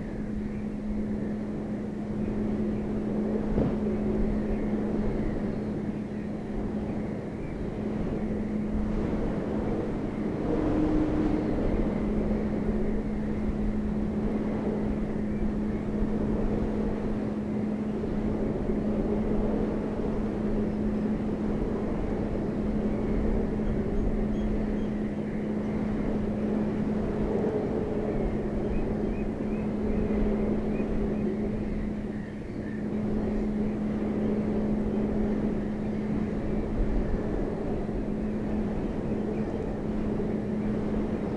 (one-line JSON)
{"title": "workum: bird sanctuary - the city, the country & me: observation platform", "date": "2013-06-25 16:11:00", "description": "wind-blown railing\nthe city, the country & me: june 25, 2013", "latitude": "52.97", "longitude": "5.41", "timezone": "Europe/Amsterdam"}